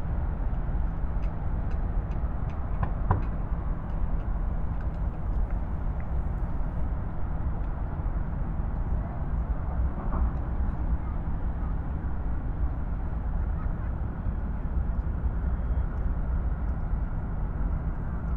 Berlin Tempelhof West
catching city drones and sonic energy on former tempelhof airport. all sounds coming from far, almost no direct sources, reflections from the building, very high gain levels. most of the permanent deep hum comes from the autobahn south of tempelhof, but the city itself has an audible sound too.
(tech note: A-B 60cm NT1a, mic direction NW)
Berlin, Germany, 13 November